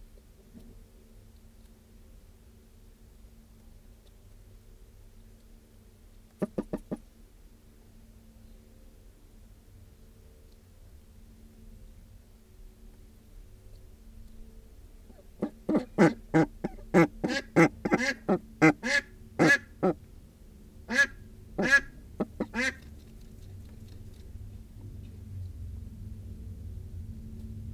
The Ducks, Reading, UK - Ducks and Humans interacting
Honey has become extremely broody and we have had to pop some fake eggs into the duck house to encourage her to lay in there rather than in her secret nests all over the garden from which it is much harder to retrieve the actual, edible eggs. So there are fake eggs in the duck house, and then she and Pretzel usually lay 2 in there overnight. Come morning, Honey can be found clucking over the "clutch" very protectively, so I decided to record her inside the duck house. I have left in the bit of handling noise as when I approached to put my recorder gently in the corner, she made an amazing warning noise - very huffy and puffy - which I have never heard before. If I cut out the handling noise, the intrusion on her space and subsequent protective warning sound would be lost, and I think they are brilliant little sonic insights into duck behaviour.
13 August 2016